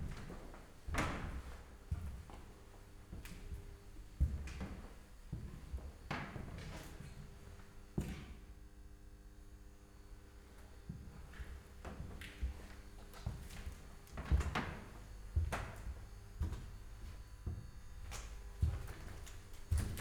strolling around in an abandoned power station of the former GDR goverment and Stasi hospital. It was a bit spooky to find one voltmeter working, showing full 230V, among dozens broken ones. Police siren suddenly, so I rather stopped recording and went invisible...
(Sony PCM D50, DPA4060)
Berlin, Germany, 30 March 2019, ~14:00